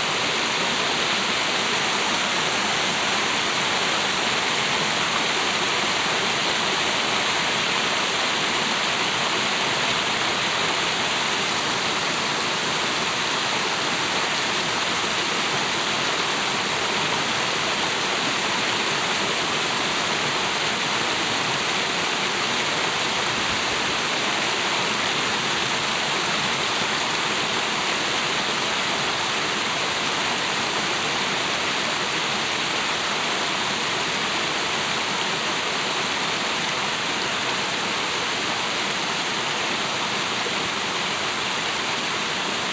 The sound of a small water fall coming down the rocks here. Funny stereo effects by moving my head.
international sound scapes - topographic field recordings and social ambiences